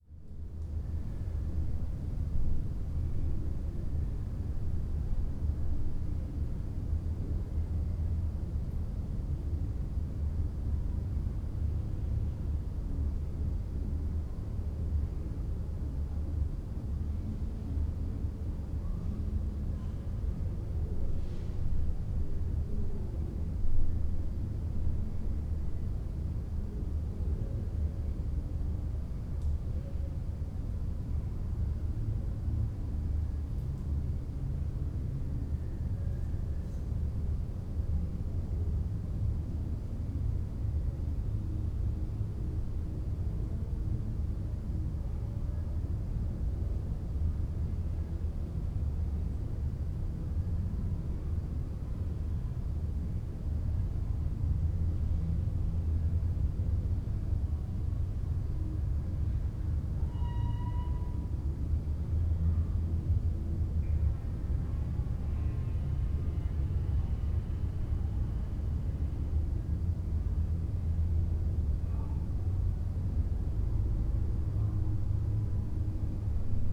inner yard window, Piazza Cornelia Romana, Trieste, Italy - saturday night
door squeak somewhere deep inside of the house
September 2013